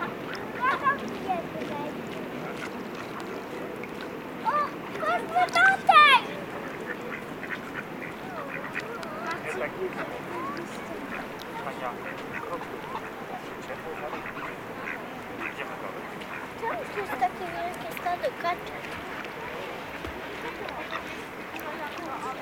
Nowa Huta Bay, Kraków, Poland - (882) Ducks and kids
An attempt to record a group of ducks. As usual, they've become shy while in front of a microphone.
Recorded with Olympus LS-P4.